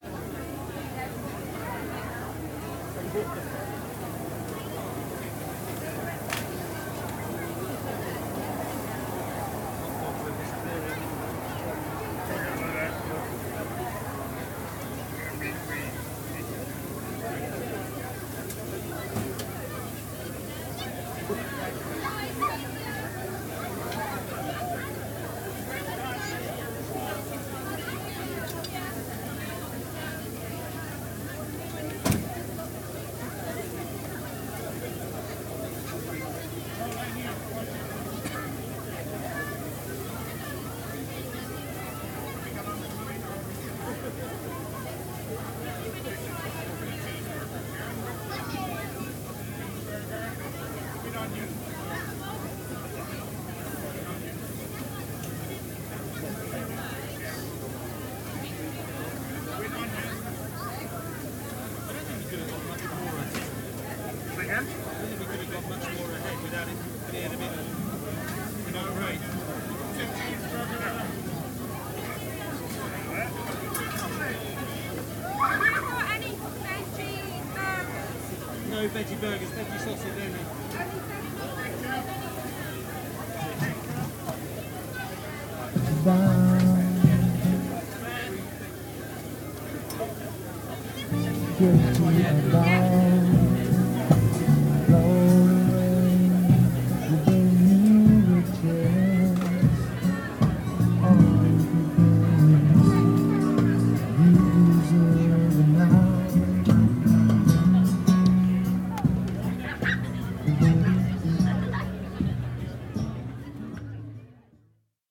The Street, South Stoke, UK - BBQ and Brass
This is the sound of the sausages and burgers being barbequed in the rain while the trains whizz past, the rain slightly mizzles, and the PA comes on with music then stops, then starts again: the quintessential soundscape of the English country May Fayre?